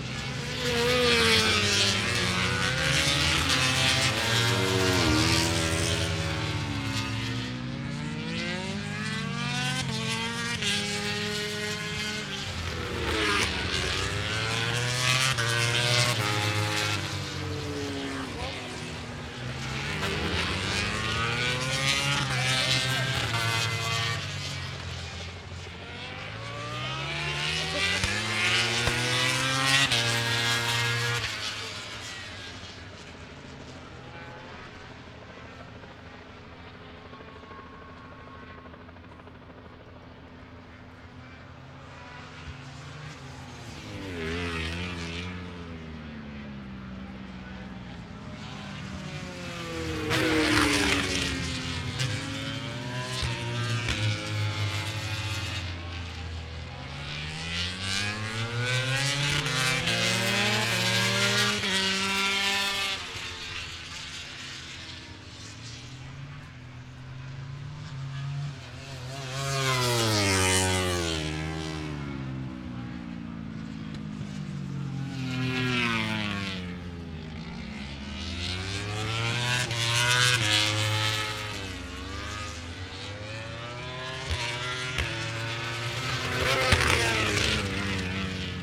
Donington Park Circuit, Derby, United Kingdom - British Motorcycle Grand Prix 2005 ... moto grandprix ...
British Motorcycle Grand Prix 2005 ... free practice one ... part one ... the era of the 990cc bikes ... single point stereo mic to minidisk ...
22 August 2005